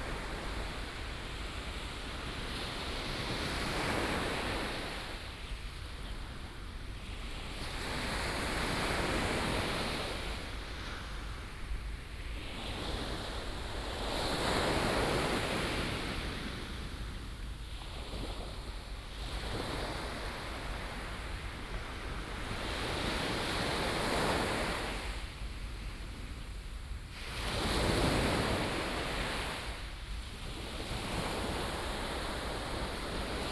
Liukuaicuo, Tamsui Dist., New Taipei City - Sound of the waves
At the beach, Sound of the waves